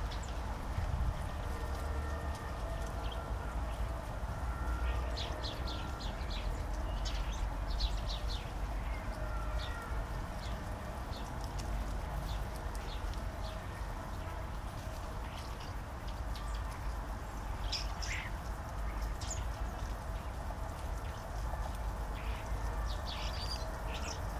Tempelhofer Feld, Berlin, Deutschland - a flock of starlings
a flock of starlings browsing around me searching for food in the grass, suddenly rushing up to the next location (Sony PCM D50, Primo EM172)